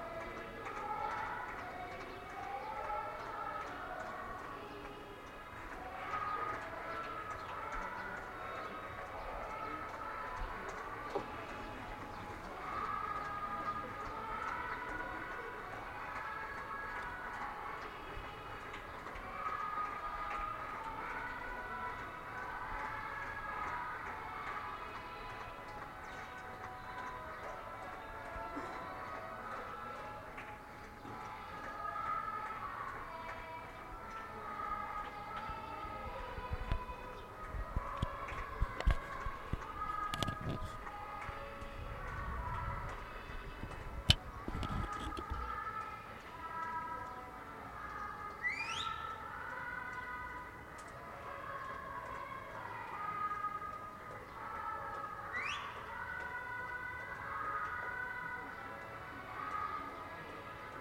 Plaza del Azulejo, Humanes de Madrid, Madrid, España - Cumpleaños Feliz en época de confinamiento Covid
Estábamos en confinamiento total por el covid-19 y el día 29 de Marzo debió ser el cumpleaños de alguien en Humanes, y desde mi balcón grabé una música de Cumpleaños Feliz que sonaba a lo lejos. Mas tarde se escuchan aplausos y la sirena de coches de policías con megáfonos felicitando a alguien por su cumpleaños. Lo cierto es que son paisajes que jamás pensamos que sucederían pero me alegra ver esa manera tan bonita de animarnos. ¡Cumpleaños Feliz!
Grabadora Zoom h1n.